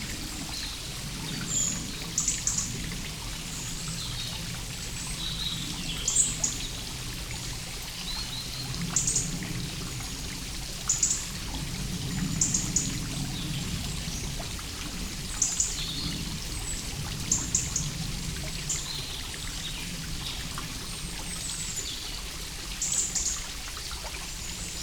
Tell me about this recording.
The Lijak stream comes to light as a karst spring from under a steep funnel wall at the foot of the Trnovski gozd. Recorded with Jecklin disk and Lom Uši Pro microphones with Sound Devices MixPre-3 II recorder. Best with headphones.